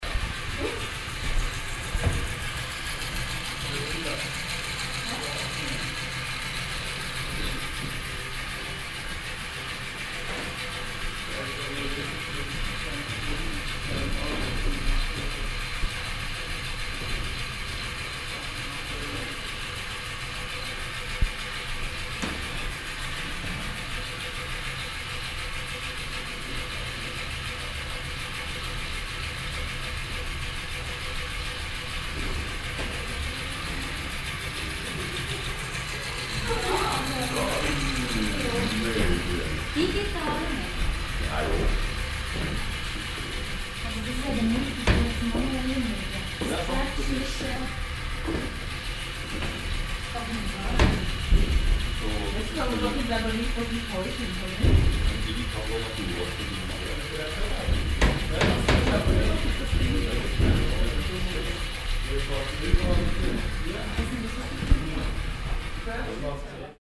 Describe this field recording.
soundmap international, social ambiences/ listen to the people - in & outdoor nearfield recordings